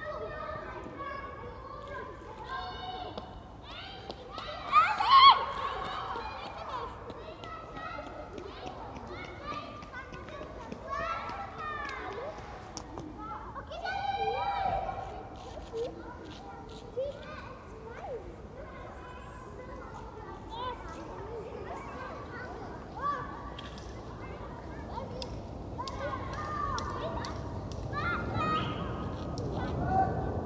Gesundbrunnen, Berlin, Germany - Reverberant appartments - plane, high heels and a countdown to tears
The reverberation within the semi-circle of these flats is special. It must be a particularly strong sonic memory for those who live here.
23 October 2011